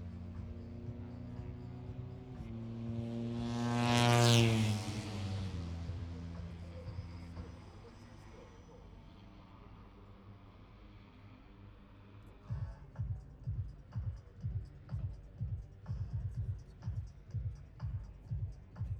Towcester, UK - british motorcycle grand prix 2022 ... moto three ...
british motorcycle grand prix 2022 ... moto three free practice three ... bridge on wellington straight ... dpa 4060s clipped to bag to zoom h5 ... plus disco ...